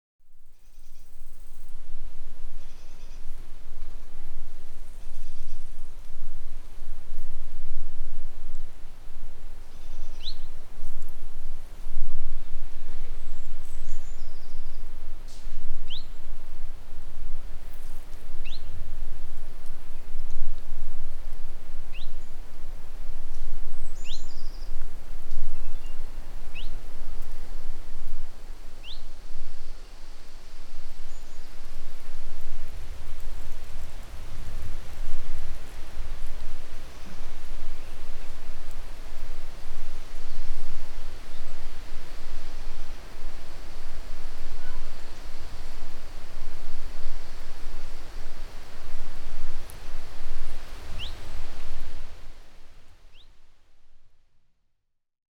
Wind, Pripyat, Ukraine - Wind in the trees
Apart from the occasional bird, Pripyat was very quiet. The wind in the trees is evident here and with the exception of Neil's video camera turning on (at about 25 seconds into this recording), the lack of man made noise is extremely pleasant.
Schoeps CCM4Lg & CCM8Lg M/S in modified Rode blimp directly into a Sound Devices 702 recorder
Edited in Wave Editor on Mac OSx 10.5